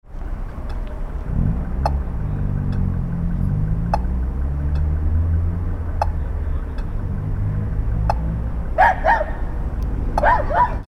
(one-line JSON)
{"title": "Centar, Rijeka, traffic", "date": "2009-06-01 20:50:00", "description": "Traffic sounds..and traffic light with acoustic signal(-:", "latitude": "45.33", "longitude": "14.44", "altitude": "10", "timezone": "Europe/Zagreb"}